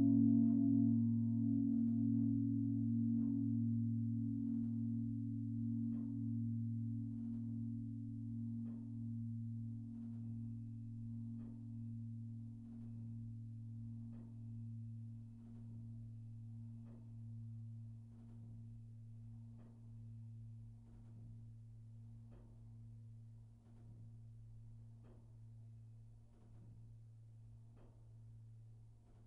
Bruges, Belgique - Brugge bells
The Brugge bells in the Onze-Lieve-Vrouwkerk. Recorded inside the tower with Tim Martens and Thierry Pauwels.
This is the solo of the biggest bell.